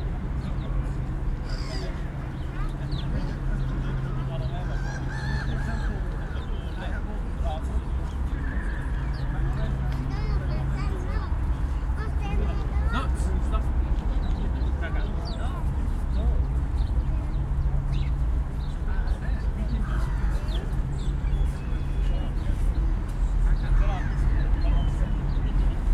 Michaelkirchpl., Berlin, Deutschland - Engeldamm Legiendamm
Engeldamm_Legiendamm
Recording position is the first park bench if you take the entrance Engeldamm and Legiendamm.
28 November 2020, 14:21